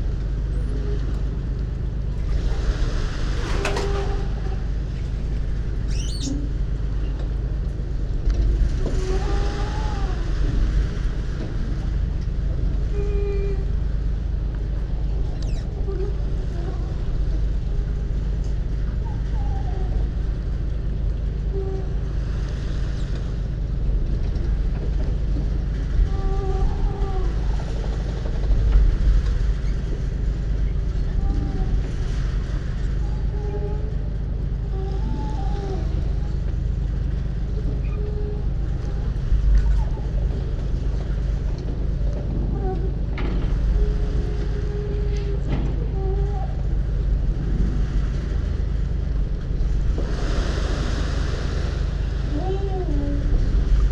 The harbor in Santa Cruz de La Palma performs a kind of Sing Sang.
A mix created by the wind, the waves pushing into the sheltered harbor basin, the pontoons that are always slightly in motion, and the running engines of the just loading large ferries.